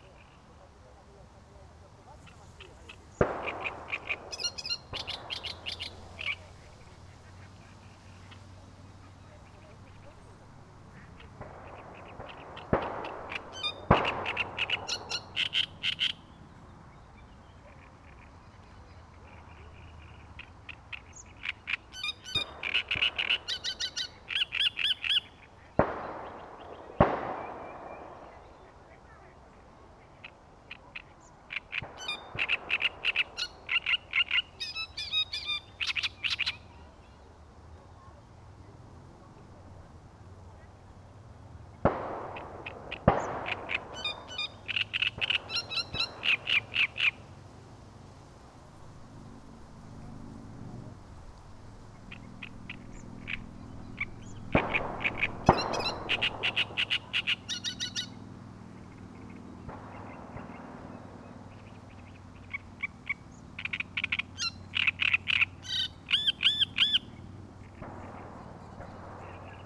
aleja Spacerowa, Siemianowice Śląskie - Great reed warbler
listening to a Great reed warbler at a patch of dense reed
(Sony PCM D50)